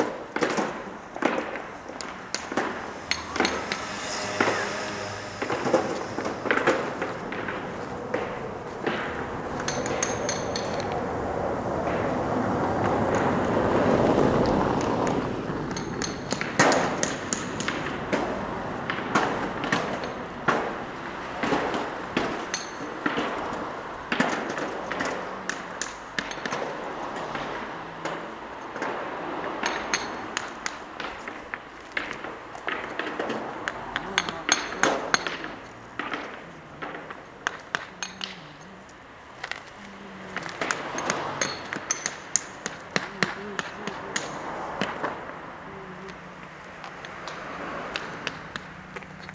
Pavia, Via G da Ferrara, Italy - men at work on the pebbles street pavement
Street pavement downtown in Pavia are made of river pebbles. Three workers here are building the pavement: one selects the best rounded stones fron a pile, puts them on a barrow and unloads on the ground, the other two gently dab the pebbles on the soil with small hammers and level them to the ground. The gentle sound created by this rhyhtmic work gives an idea of the patience required